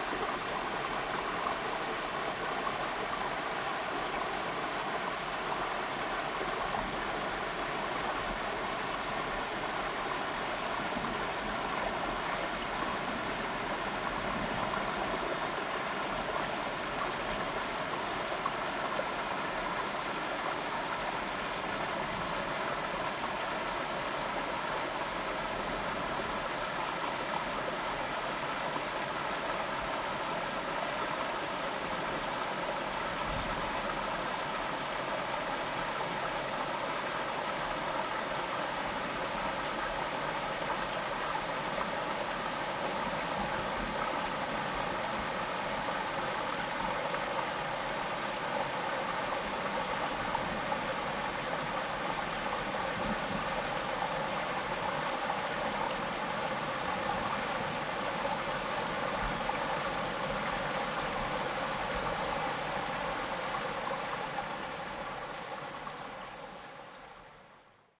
TaoMi River, 紙寮坑桃米里 - The sound of the river
Insect sounds, The sound of the river, The sound of thunder
Zoom H2n MS+XY +Spatial audio
Nantou County, Taiwan, 27 July 2016, 15:58